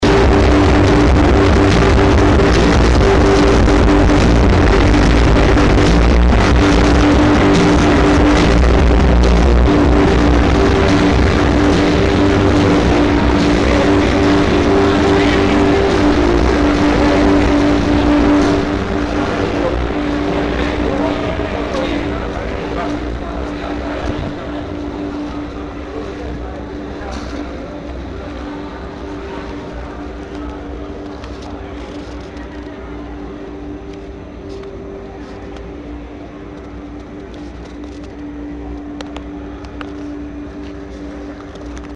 Gijon. LEV09
2009/5/3. 6:03 a.m. Laboral university. Leaving the concert of Byetone. From the intense noise to the silence of the night... and going to sleep after a strenuous weekend.
Gijón, Asturias, Spain